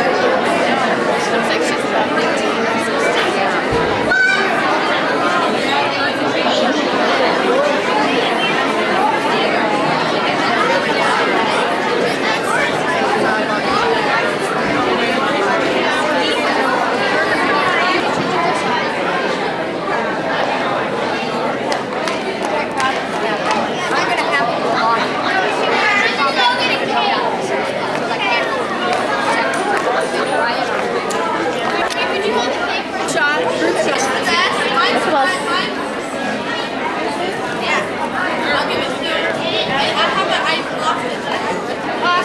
Sherwood Elementary - Auditorium #1
Graduation night at an elementary school. Bedlam.